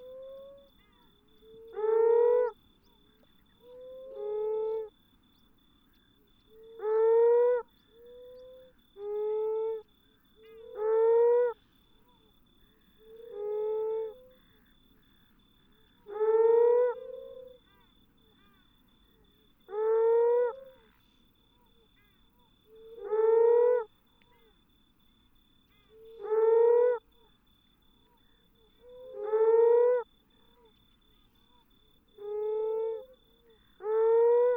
Temeraire Rd, Rottnest Island WA, Australien - Sounds of Moaning Frogs and Paradise Shelducks in the night
Moaning Frogs calling from burrows in the ground. Shelducks calling from nearby lake, on a calm and warm night. Recorded with a Sound Devices 702 field recorder and a modified Crown - SASS setup incorporating two Sennheiser mkh 20 microphones.
Western Australia, Australia, 3 May 2012